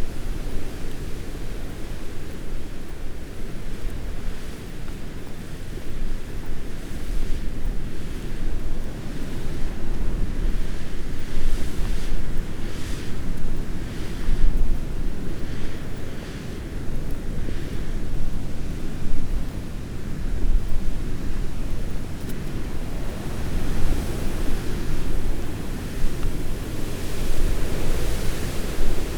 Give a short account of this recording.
walking the parabolic on a blustery morning ... gently swinging the parabolic in walking home mode ... just catching the wind as it blasted through the hedgerows and trees ... bird calls ... dunnock ... tree sparrow ...